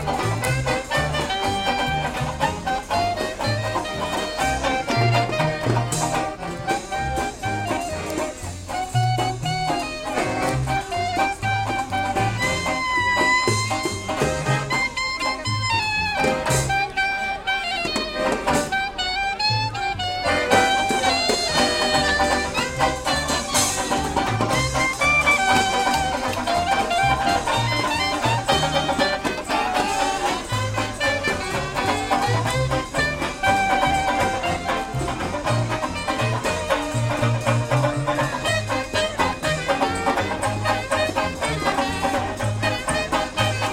Call for prayer & charleston . Plovdiv
Recorded in motion from inside the mosque, getting out & walking around the central place. There was a band playing & they stopped, waiting the end of the call to restart. Contrast of ambiences & mutual respect...
Plovdiv, Bulgaria, 3 August 2005, 11:18